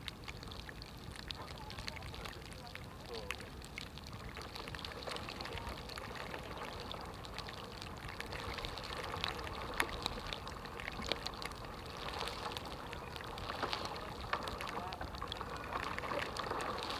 Alameda Cardeal Cerejeira, Lisboa, Portugal - Palm tree hairs at the top of the stem, contact mic
14 September 2017